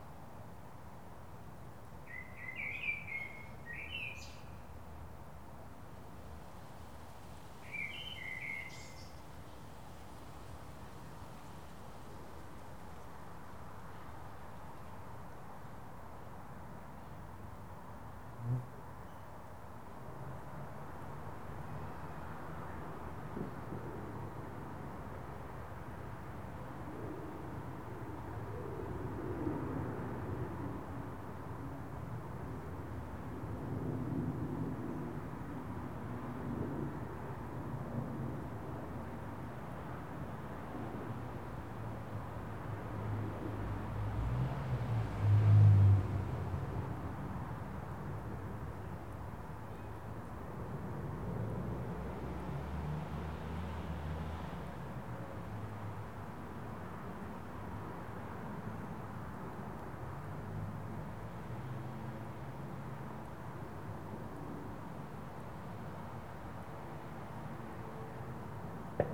Montreuil, France - Backyard, Montreuil
The end of a quiet summer afternoon.
Sounds of birds, insects, wind and the background noises from the road.
Zoom H4n
10 July 2016